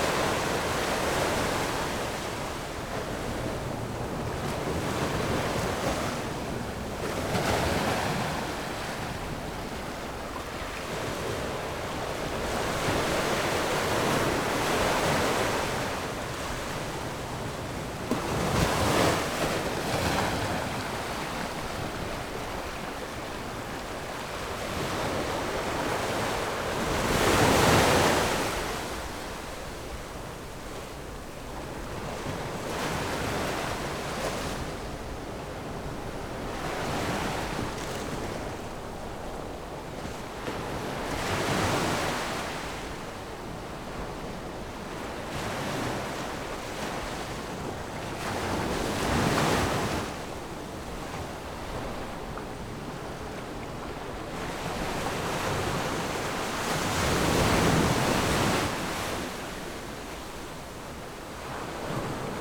芹壁村, Beigan Township - Sound of the waves
Sound of the waves, Small port, Pat tide dock
Zoom H6 +Rode NT4